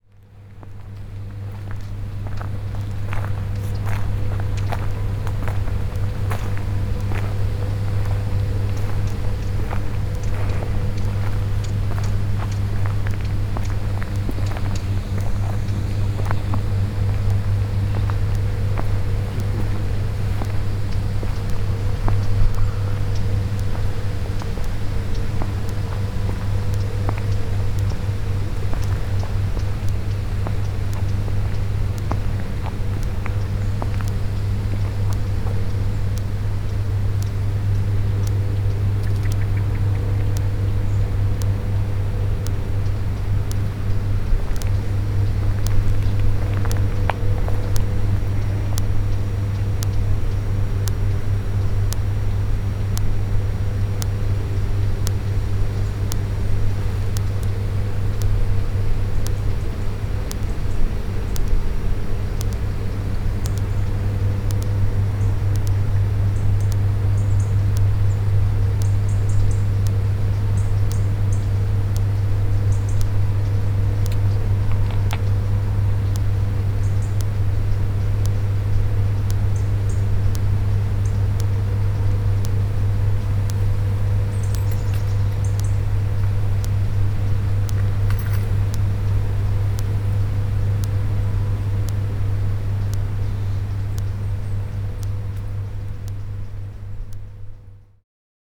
Chooz, centrale nucleaire - nuclear plant
Chooz, centrale nucléaire - nuclear plant
Chooz, France, 30 July 2011